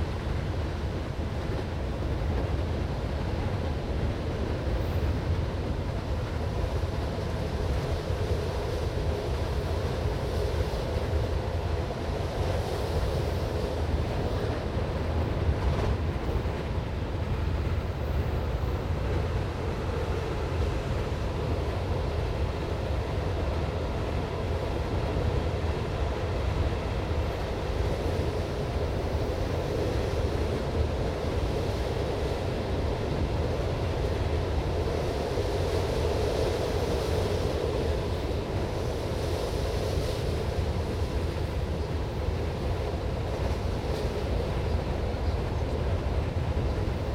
binaural recording made while riding the train from Venice to Udine.
Italy